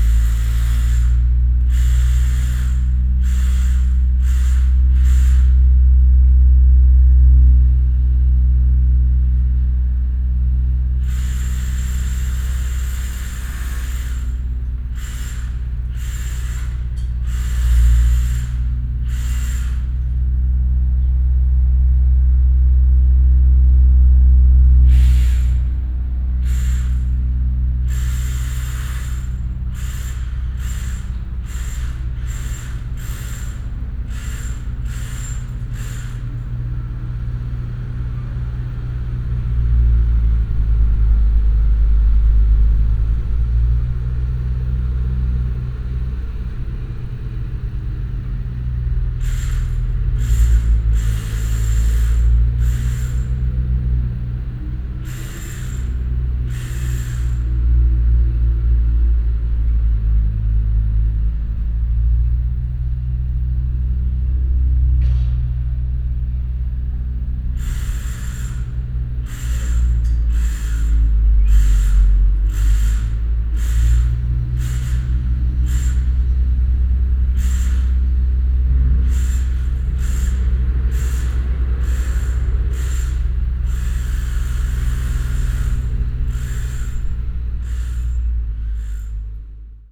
housing complex, mateckiego street - drilling
(binaural recording) excavator in operation as well as some drilling on the construction site. (roland r-07 + luhd PM-01 bins)
wielkopolskie, Polska